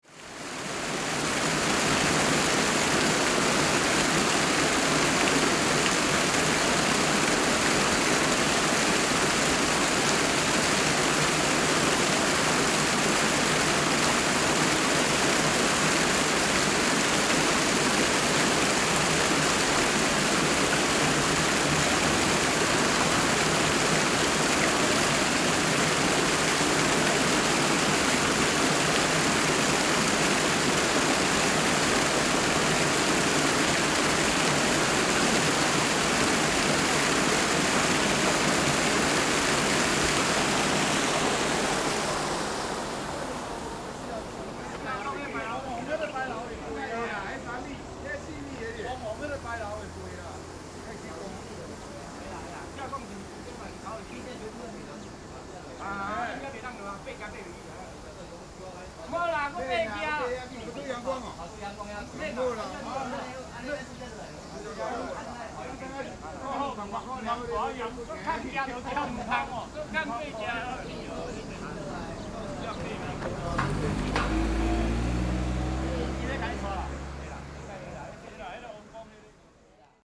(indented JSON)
{
  "title": "Chencuokeng River, Sanzhi Dist., New Taipei City - Stream",
  "date": "2012-06-25 12:19:00",
  "description": "Stream, Beside streams, Traffic Sound\nZoom H4n+Rode NT4 ( soundmap 20120625-32)",
  "latitude": "25.25",
  "longitude": "121.52",
  "altitude": "96",
  "timezone": "Asia/Taipei"
}